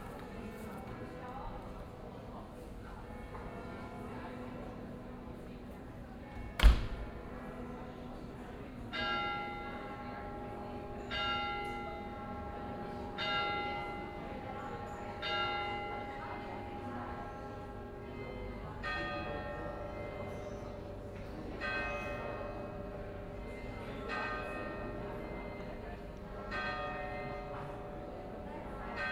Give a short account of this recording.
End of the walk during a quiet evening in Aarau, the bells toll nine o'clock